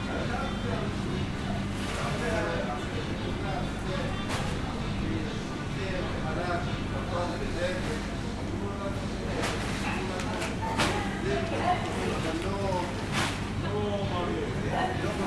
Mahane Yehuda St, Jerusalem, Israel - Iraqi Market at Machane Yehuda, Jerusalem
Iraqi Market at Machane Yehuda, Jerusalem, closing market time, night time.
31 March 2019